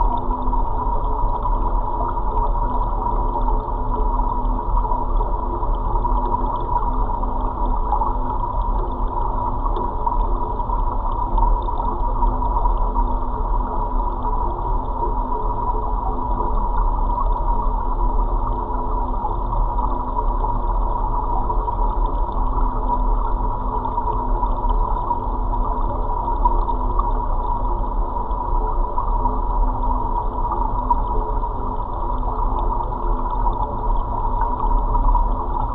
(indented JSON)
{
  "title": "Minčia, Lithuania, watermill vibrations",
  "date": "2021-03-08 12:50:00",
  "description": "Roaring watermill whiter noise. Geophone on metallic construction od a dam.",
  "latitude": "55.48",
  "longitude": "25.98",
  "altitude": "151",
  "timezone": "Europe/Vilnius"
}